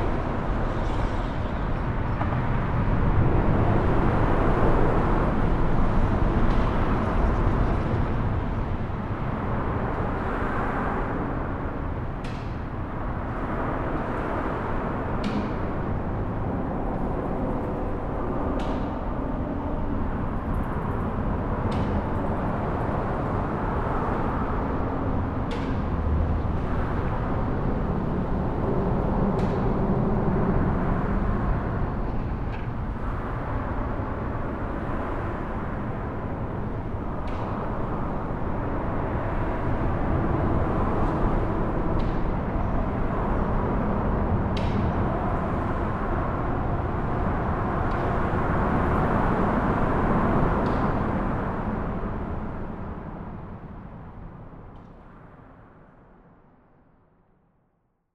Quick recording underneath the bridge!
MD, USA, 12 September, 13:10